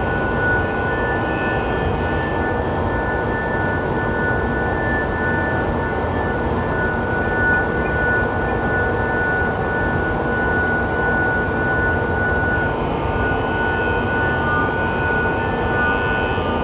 Grevenbroich, Germany - Harmonic tones from massive coal conveyer belts
Sometimes the massive conveyor belt systems produce these musical harmonics, but not all the time. How or why is completely unclear. Heard from a few kilometers away they sound like mysterious very distant bells.
2 November 2012